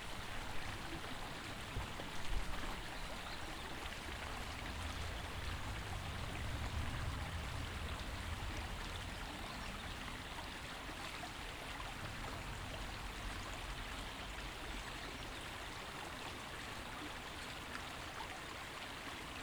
Britasvägen, Helsingfors, Finland - Stream by the grave yard
Ambient field recording for Central Park Archives project 2020.
Recorded with Zoom H4n.
Manner-Suomi, Suomi, June 2020